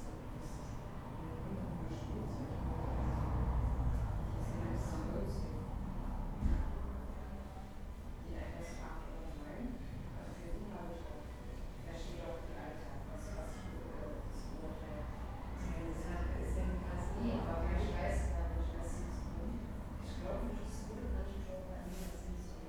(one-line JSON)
{"title": "berlin, friedelstraße: arztpraxis - the city, the country & me: doctor's office", "date": "2011-04-20 11:43:00", "description": "almost empty waiting room of a doctor's office, receptionists talking\nthe city, the country & me: april 20, 2011", "latitude": "52.49", "longitude": "13.43", "altitude": "45", "timezone": "Europe/Berlin"}